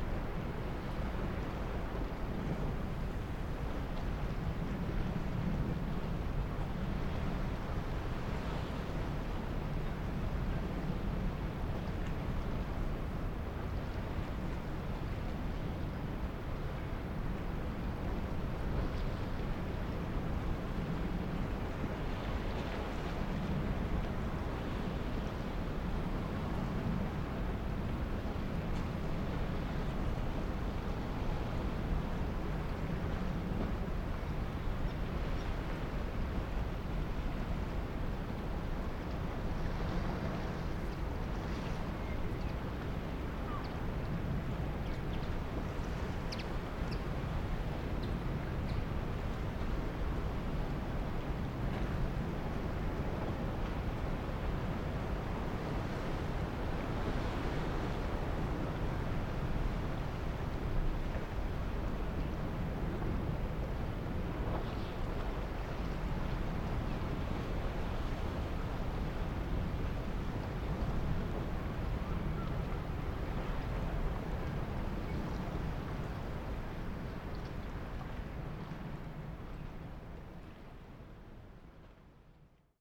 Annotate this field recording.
La mer et quelques oiseaux. ambience vide. Sea and somes birds.Quiet soundscape. April 2019.